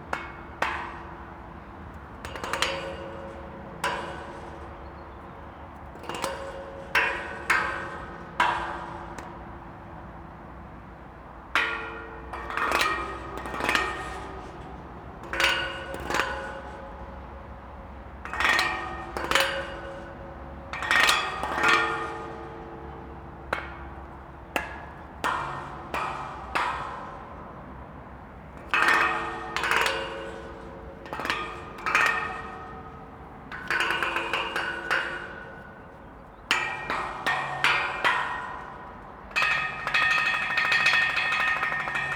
From the middle of the bridge, Strakonická, Velká Chuchle-Barrandov, Czechia - Playing the metal railings mid-bridge with two found wood sticks
The metal railings seperating the railtrack from the footpath are quite musical. There are different pitches and timbres. These are being played with two wooden sticks found nearby. The traffic from the autobahn beside the river below is the backdrop.